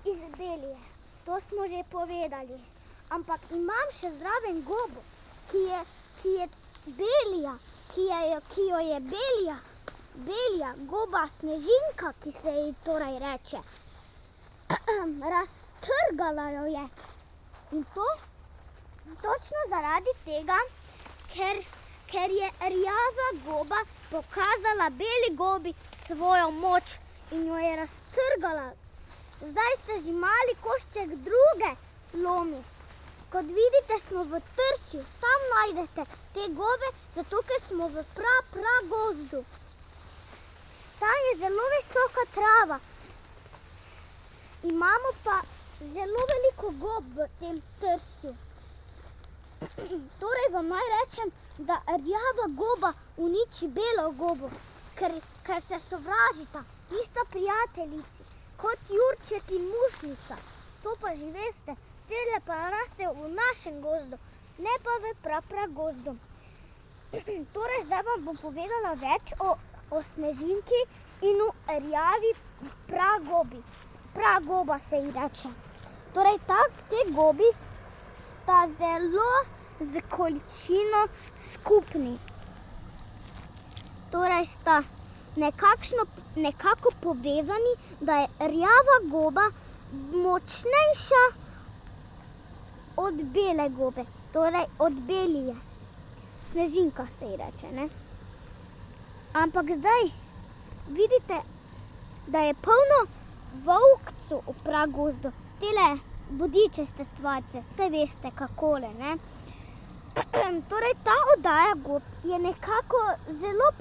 Trije ribniki, Podova, Slovenia - lecture: on mushrooms
short lecture on mushrooms while walking on a path through reeds
Rače, Slovenia, 11 November 2012